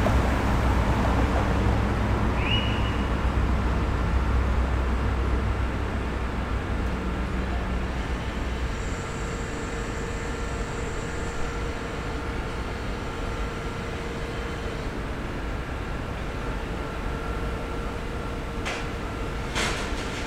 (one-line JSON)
{"title": "Seidlstraße, München, Deutschland - underpass, little traffic, in the evening", "date": "2020-07-06 23:20:00", "latitude": "48.14", "longitude": "11.56", "altitude": "531", "timezone": "Europe/Berlin"}